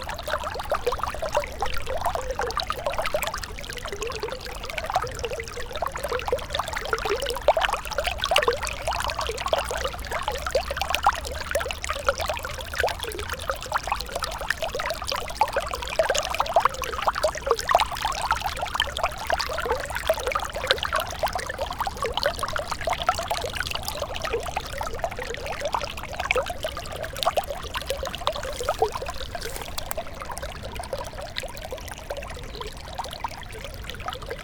old river bed, drava, melje, maribor - small stream spring poema, through stones